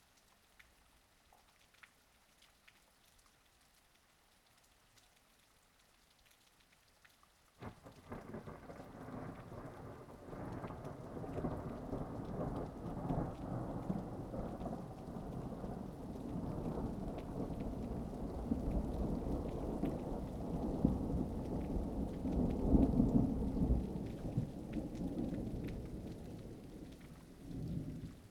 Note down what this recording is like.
intense thunderstorm with rain, wind, lightening and thunder.